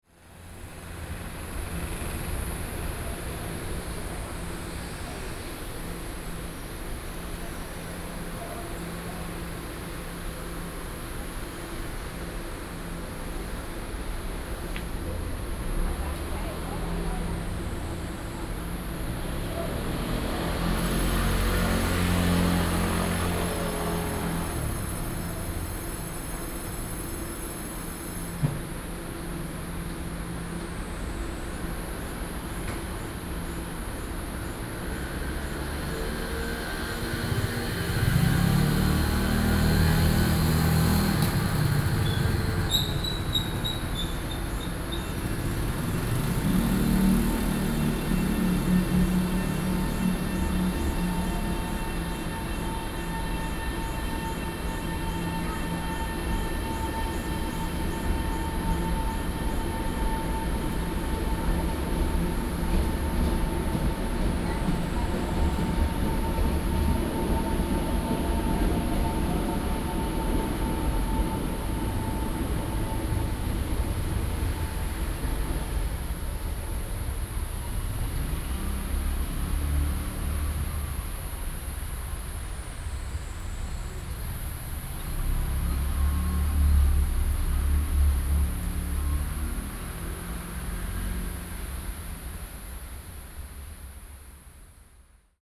Zhonghe St., Shulin Dist., New Taipei City - Small alley
Small alley, Near rail station, Traffic Sound, Traveling by train
Sony PCM D50+ Soundman OKM II